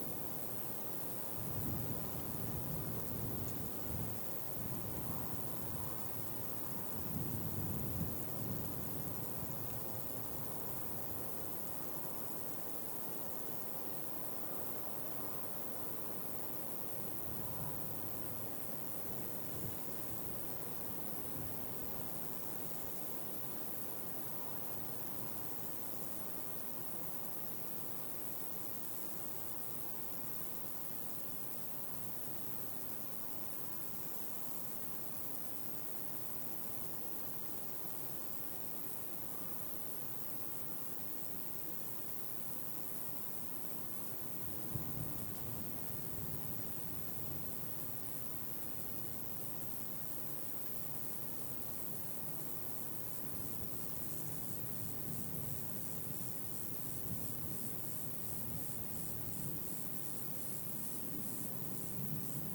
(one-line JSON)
{"title": "Wolbrom, Polska - insects", "date": "2015-09-08 14:00:00", "description": "Zoom H4N, recording of insects in the grass.", "latitude": "50.37", "longitude": "19.75", "altitude": "388", "timezone": "Europe/Warsaw"}